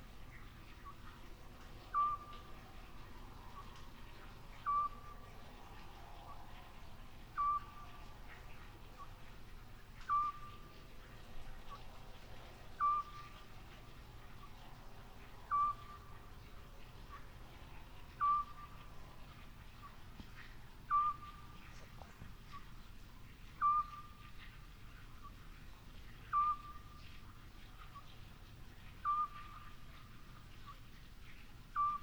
Lachania, Rhodos, Griechenland - Lahania, Taverna Platanos and church square, Eurasian Scops Owl calling at night
Village center at night. The sound of water flowing from a well, and a Eurasian Scops Owl (Otus scops) calling in the bigger one of the Platana Trees at Taverna Platanos. Binaural recording. Artificial head microphone set up on the terasse. Microphone facing south east. Recorded with a Sound Devices 702 field recorder and a modified Crown - SASS setup incorporating two Sennheiser mkh 20 microphones.
Αποκεντρωμένη Διοίκηση Αιγαίου, Ελλάς, 22 October 2021